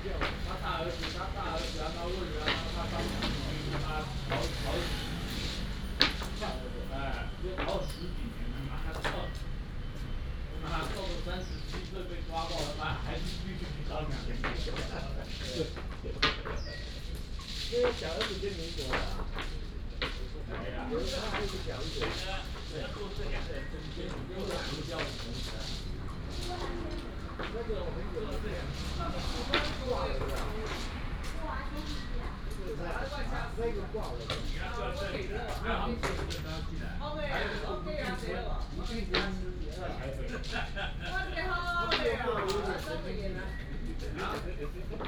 金城二路, East Dist., Hsinchu City - Mahjong
A group of people are playing mahjong, traffic sound, Binaural recordings, Sony PCM D100+ Soundman OKM II